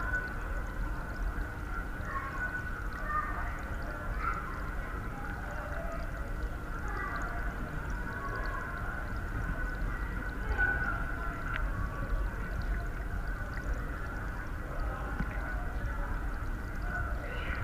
Stolbergallee, Paderborn, Deutschland - Unter Wasser am Rothebach
where
you are not supposed
to go
but unter
the most beautiful play of
water and light
a bridge
between
pleasure and pleasure
even the dogs
won't notice you
Nordrhein-Westfalen, Deutschland, 12 July 2020